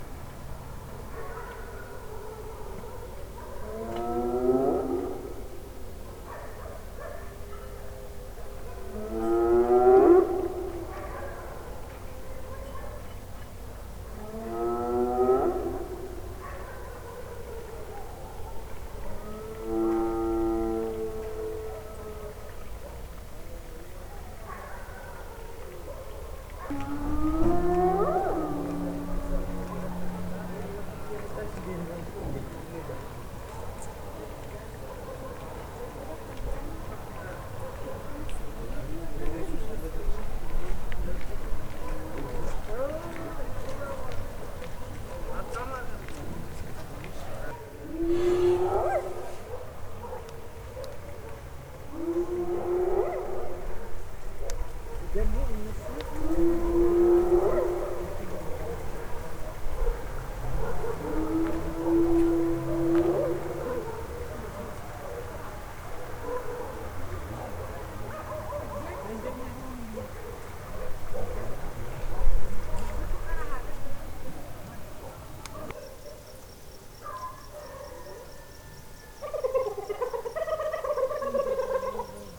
Harar, Harargué, Éthiopie - Djib
Djib means Hyena in amharic.
This recording is devided in four parts.
The three first ones are taken from the window of the hotel tewodros, facing the empty lot/waste land (middle of the night, people and spring/sewer). at night hyenas are wandering in the city and shout, sometimes, lough, rarely. it was not possible to catch all of it in one recording. but those 4 are from the same night and certainly same group of hyenas. The last one was taken in the really late night (rooster, locusts) from outside the hotel. they don't laugh so often...